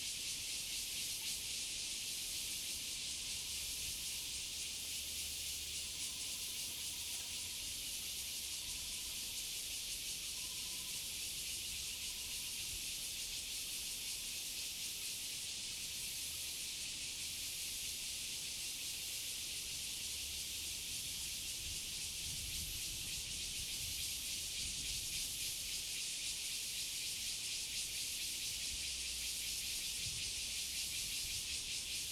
Cicada, traffic sound, Zoom H2n MS+XY
14 August 2017, ~12:00